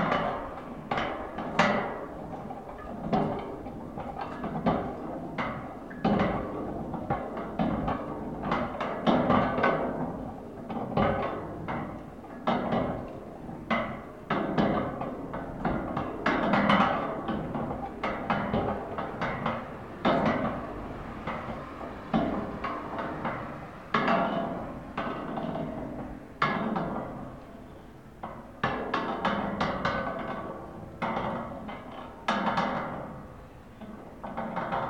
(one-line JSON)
{"title": "Wakefield Rd, Huddersfield, UK - KEYS CUT metal sign spinning", "date": "2017-11-14 00:13:00", "description": "Walking home late at night there was just enough breeze to catch the rotatable metal KEYS CUT sign to set it spinning in motion. Using my small TASCAM DR100 and a home made wind sock and putting the microphone very close to the base and side of the sign made this recording.", "latitude": "53.64", "longitude": "-1.77", "altitude": "70", "timezone": "Europe/London"}